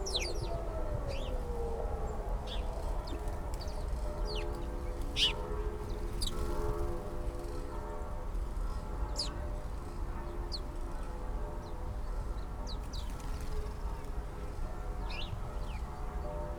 Tempelhofer Park, Berlin - sparrows in a rose hip bush
fluttering sparrows in a rose hip bush, Sunday churchbells, a sound system in the distance
(Sony PCM D50, DPA4060)
12 October 2014, 11:00